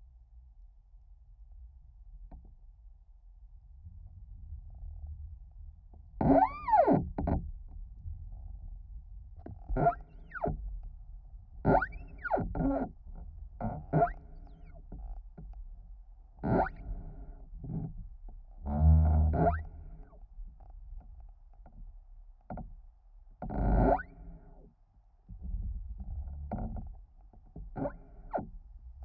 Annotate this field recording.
The contact mics are simple self made piezos, but using TritonAudio BigAmp Piezo pre-amplifiers, which are very effective. They reveal bass frequencies that previously I had no idea were there.